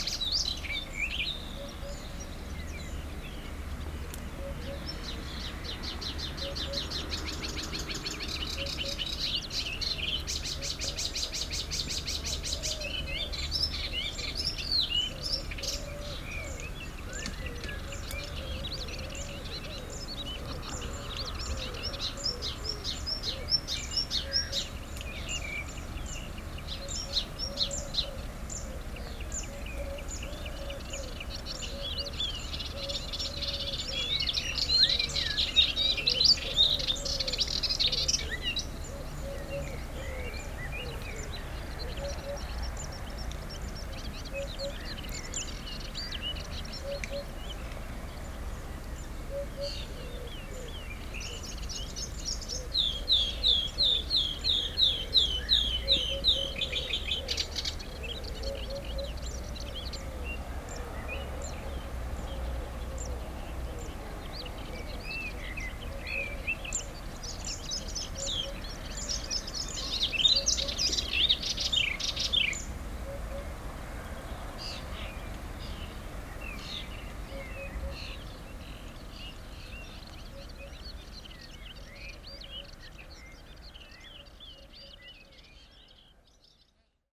La Faute-sur-Mer, France - Eurasian Blackcap
Here, 29 persons died because of a terrible tempest called Xynthia. All houses were destroyed. Now the place is a golf.
You can here the very excited Eurasian Blackcap, the Eurasian Hoopoe (hou-hou-hou) and the Zitting Cisticola (zzi zzi zzi...).